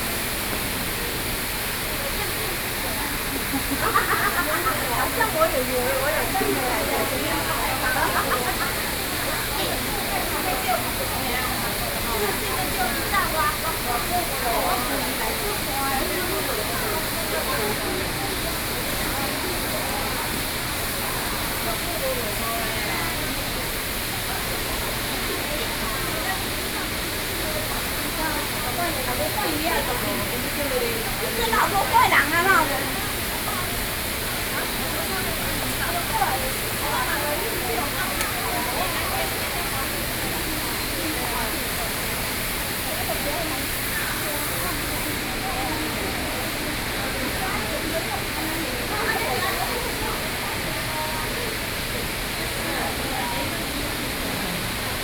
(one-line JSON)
{"title": "Pingxi District, New Taipei City - Waterfall", "date": "2012-11-13 14:36:00", "latitude": "25.05", "longitude": "121.79", "altitude": "185", "timezone": "Asia/Taipei"}